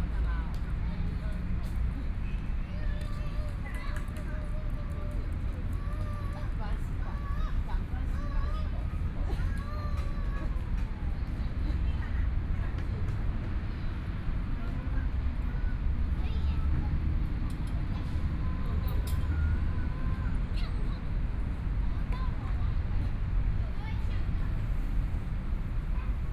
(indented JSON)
{
  "title": "Linsen Park, Taipei City - The park at night",
  "date": "2014-02-28 20:13:00",
  "description": "The park at night, Children's play area, Traffic Sound, Environmental sounds\nPlease turn up the volume a little\nBinaural recordings, Sony PCM D100 + Soundman OKM II",
  "latitude": "25.05",
  "longitude": "121.53",
  "timezone": "Asia/Taipei"
}